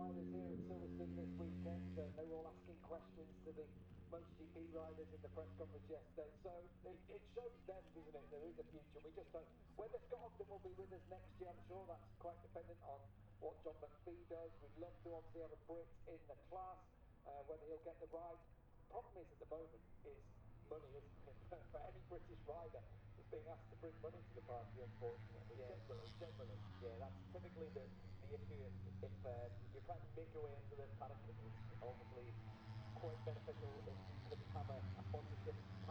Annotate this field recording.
moto three free practice one ... maggotts ... dpa 4060s to MixPre3 ...